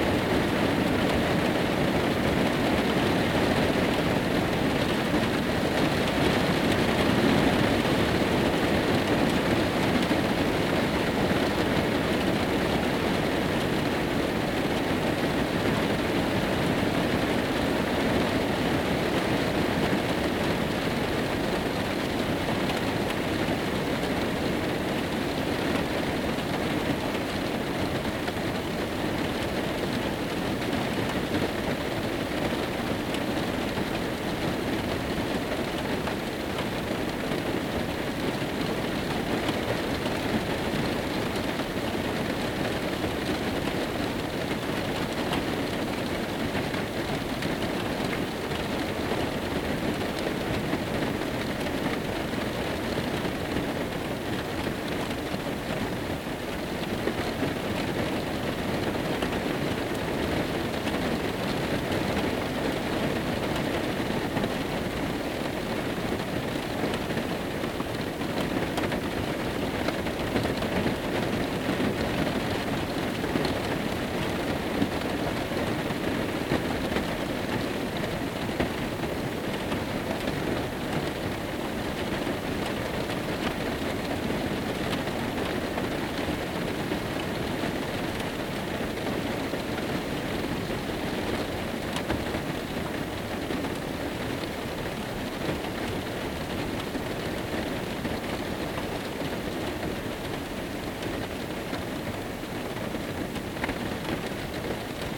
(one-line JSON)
{"title": "Hooker Valley Road, Aoraki Mount Cook National Park, New Zealand - Rain at night inside a van at White Horse Hill Campsite", "date": "2021-06-02 23:41:00", "description": "Night recording of rain, inside a van at campsite nearby Mount Cook.\nZoomH4 in stereo.", "latitude": "-43.72", "longitude": "170.09", "altitude": "772", "timezone": "Pacific/Auckland"}